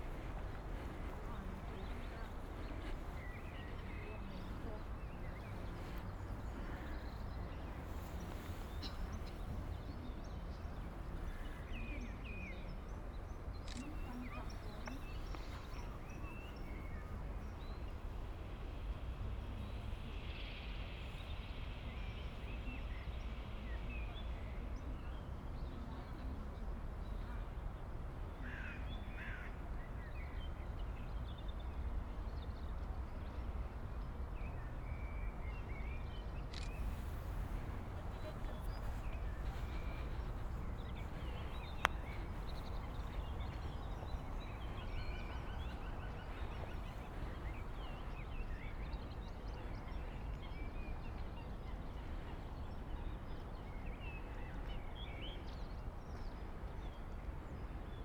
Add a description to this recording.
Thursday March 19th 2020. San Salvario district Turin, to Valentino, walking on the right side of Po river and back, nine days after emergency disposition due to the epidemic of COVID19. Start at 6:29 p.m. end at 7:15 p.m. duration of recording 46’08”. Local sunset time 06:43 p.m. The entire path is associated with a synchronized GPS track recorded in the (kmz, kml, gpx) files downloadable here: